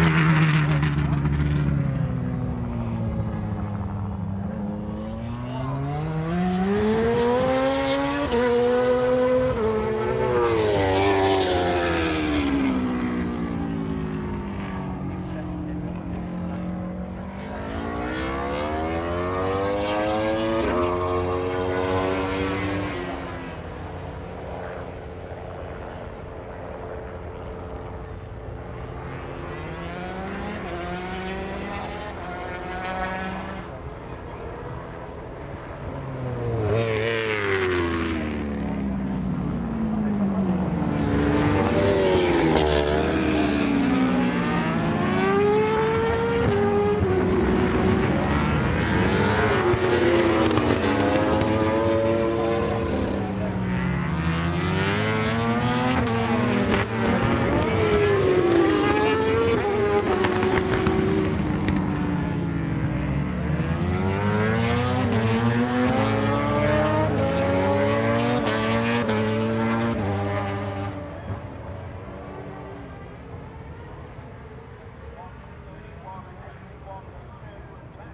Castle Donington, UK - British Motorcycle Grand Prix 2003 ... moto grand prix ...
Free Practice ... part one ... Melbourne Loop ... Donington Park ... mixture of 990cc four strokes and 500cc two strokes ... associated noises ... footsteps on gravel ... planes flying into East Midlands Airport ... etc ... ECM 959 one point stereo mic to Sony Minidisk ...
Derby, UK, 12 July 2003, ~10:00